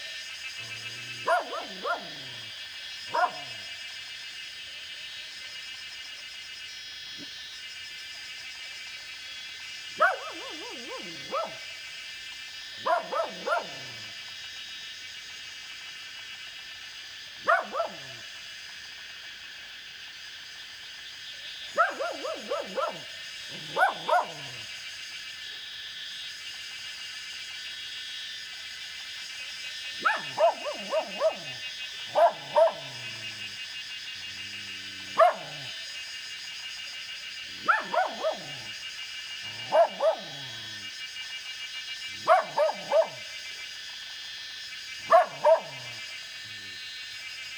中路坑, 埔里鎮桃米里 - Cicada and Dogs barking
Cicada sounds, Dogs barking
Zoom H2n MS+XY